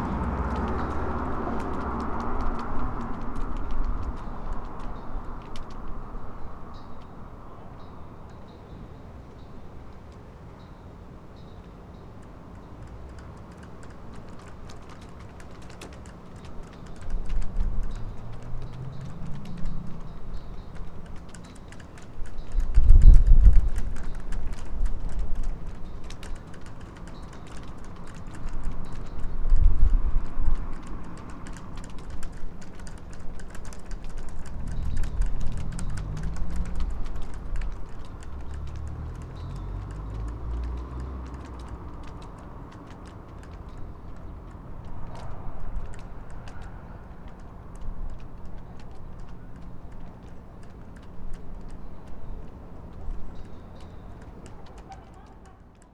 Latvia, Ventspils, at Livonian Order Castle
poles of the flags in wind